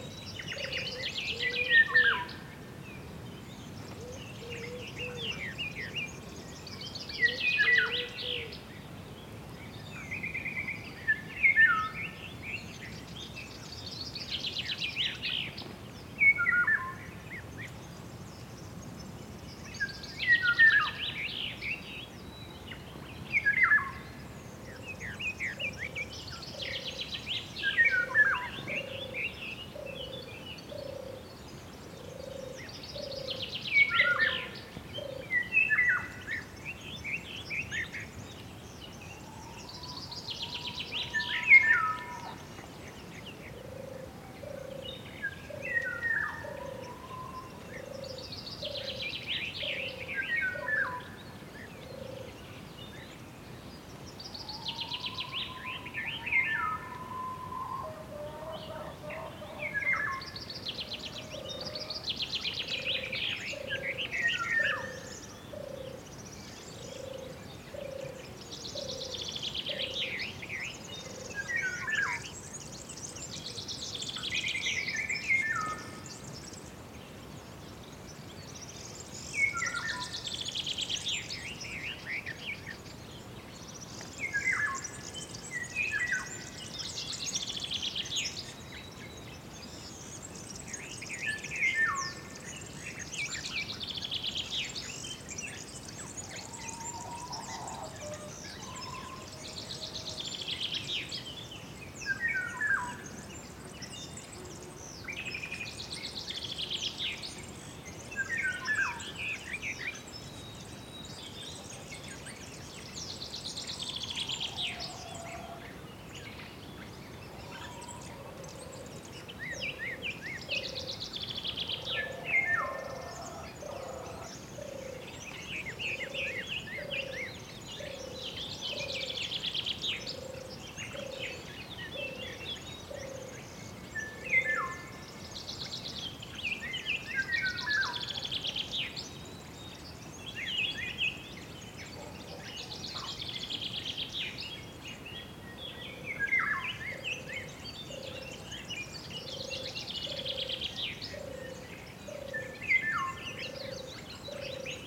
Calot, Azillanet, France - Spring in the garden: Oriole and other birds
Sound recording in the garden during spring: Oriole (Loriot) is singing, some others birds around and a few chicken in background. A light wind in the trees in background.
Recorded with a MS setup Schoeps CCM41+CCM8 in a Cinela Zephyx windscreen, on a Sound Devies 633 Recorder.
Recorded on 3rd of May 2017 in the early morning in my garden in South of France.
3 May 2017